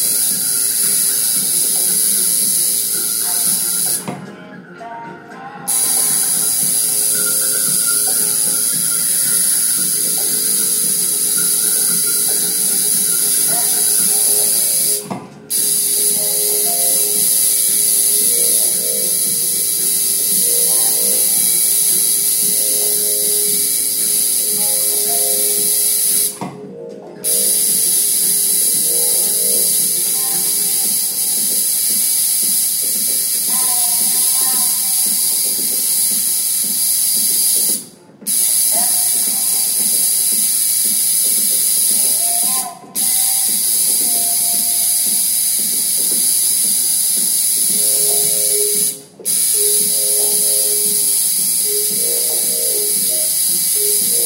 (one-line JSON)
{"title": "Tatu studio, Cara Urosha, Belgrade", "date": "2011-06-15 17:12:00", "latitude": "44.82", "longitude": "20.46", "altitude": "92", "timezone": "Europe/Belgrade"}